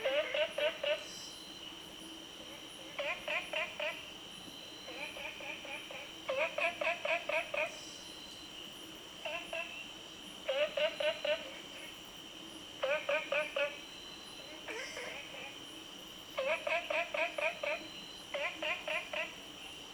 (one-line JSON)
{"title": "Zhonggua Rd., 埔里鎮桃米里 - late at night", "date": "2016-07-14 01:21:00", "description": "Stream, Frog Sound, On the bridge, late at night\nZoom H2n MS+XY", "latitude": "23.95", "longitude": "120.92", "altitude": "587", "timezone": "Asia/Taipei"}